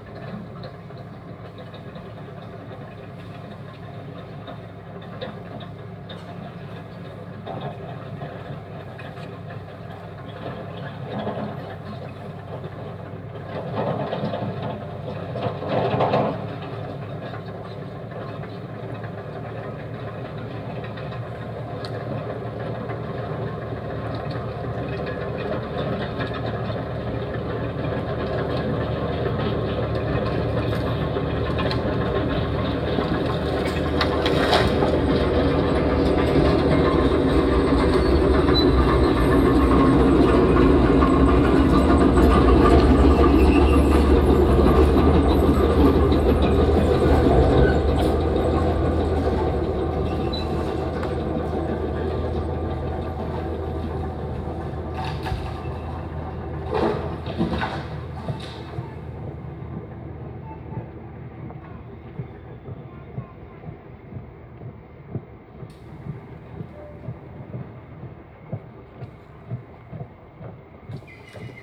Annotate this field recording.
In a small wood inside the Karlsaue during the documenta 13. The sound of a hörspiel like multi channel sound installation by Janet Cardiff and George Bures Miller. Also to be heard photo clicks of visitors and a child crying. soundmap d - social ambiences, art places and topographic field recordings